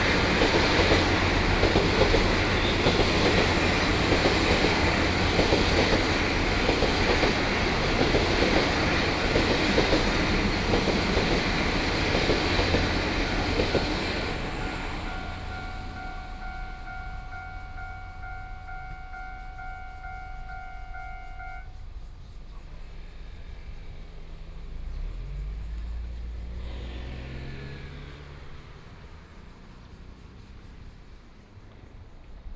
{"title": "Xipu Rd., Guanshan Township - Near the railway level crossing", "date": "2014-09-07 10:33:00", "description": "The sound of water, Traffic Sound, Near the railway level crossing, Train traveling through", "latitude": "23.05", "longitude": "121.17", "altitude": "228", "timezone": "Asia/Taipei"}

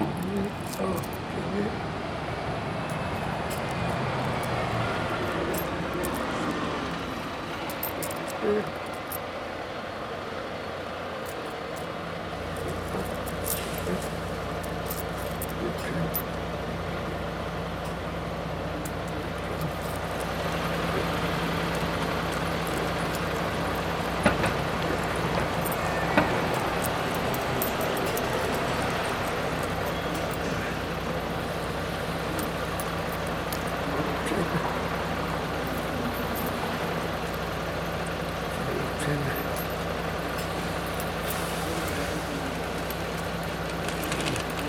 {"title": "Schloßhofer Str., Wien, Österreich - song", "date": "2022-04-30 08:44:00", "description": "old man singing and talking", "latitude": "48.26", "longitude": "16.40", "altitude": "165", "timezone": "Europe/Vienna"}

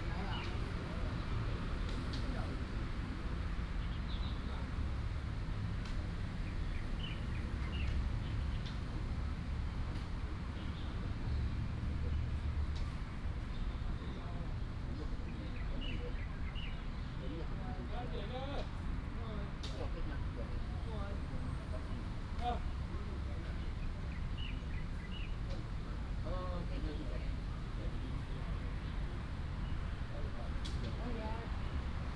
{"title": "Nanya Park, North District, Hsinchu City - in the Park", "date": "2017-10-06 15:01:00", "description": "A group of old people playing chess, wind, fighter, traffic sound, birds sound, Binaural recordings, Sony PCM D100+ Soundman OKM II", "latitude": "24.82", "longitude": "120.97", "altitude": "15", "timezone": "Asia/Taipei"}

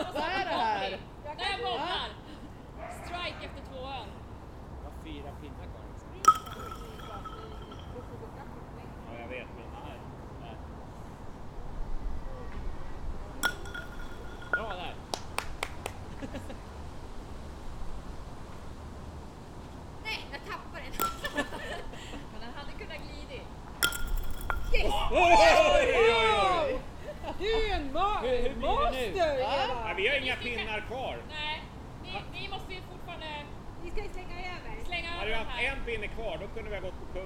{"title": "Kungsholmen, Stockholm, Suecia - Playing Kubb", "date": "2016-08-11 17:28:00", "description": "Joves jugant al joc de bitlles Kubb.\nPeople playing Kubb.\nGente jugando al Kubb", "latitude": "59.33", "longitude": "18.04", "altitude": "37", "timezone": "Europe/Stockholm"}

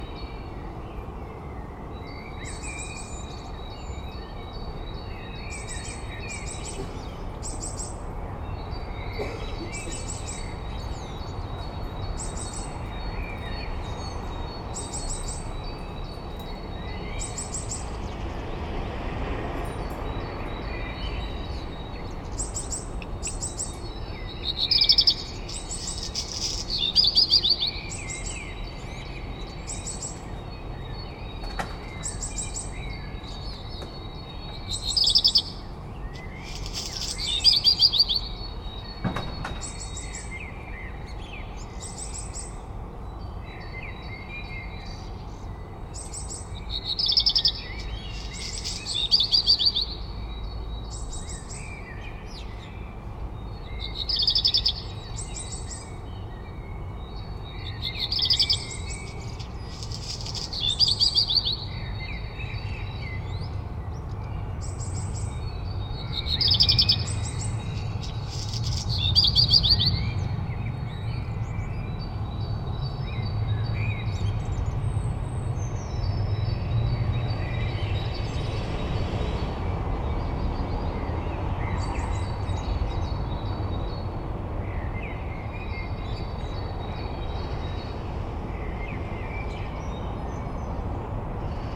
{"title": "Eitelstraße, Berlin, Germany - the kiez awakens", "date": "2019-04-01 05:00:00", "description": "dawn chorus of birds recorded from roof of house. the kiez awakens", "latitude": "52.51", "longitude": "13.49", "altitude": "39", "timezone": "Europe/Berlin"}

